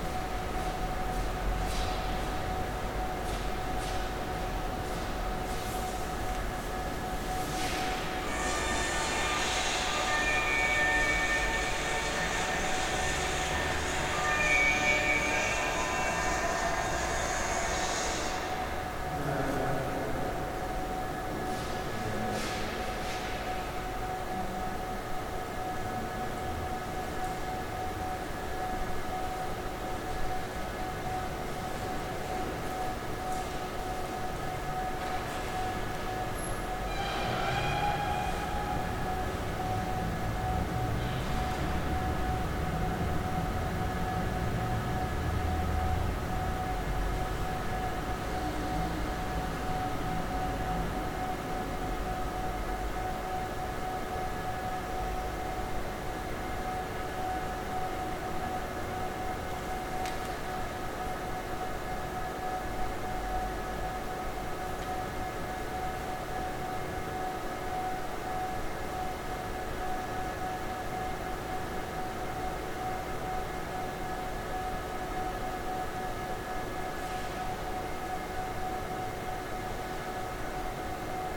Kaunas, Kaunas, Lithuania - Train station waiting hall atmosphere
Large reverberant waiting hall of Kaunas city train station. Recorded with ZOOM H5.